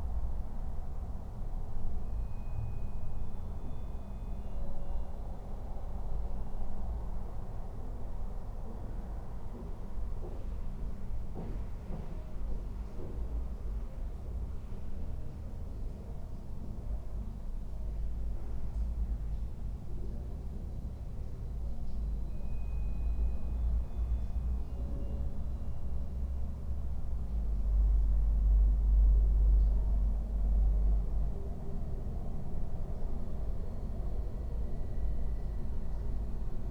doors, Karl Liebknecht Straße, Berlin, Germany - wind through front door crevice, inside and outside merge
softened sounds of the city, apartment building and a room
Sonopoetic paths Berlin